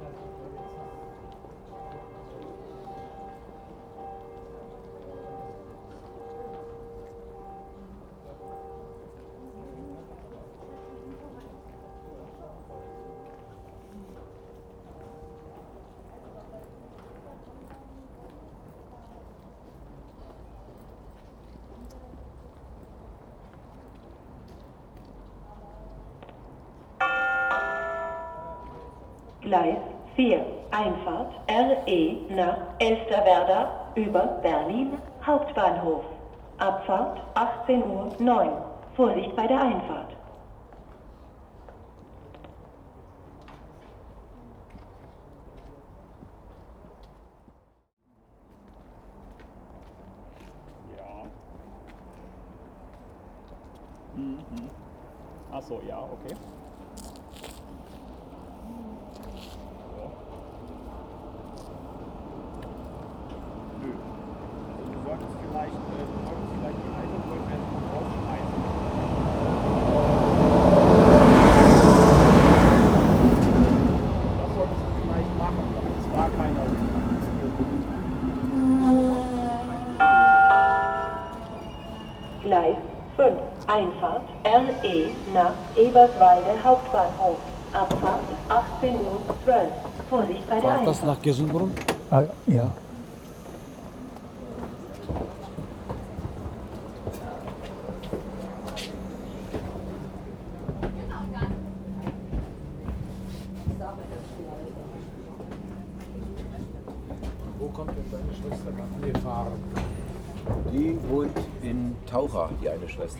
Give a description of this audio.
6.00pm bells and station atmosphere while waiting for a train. Cold January.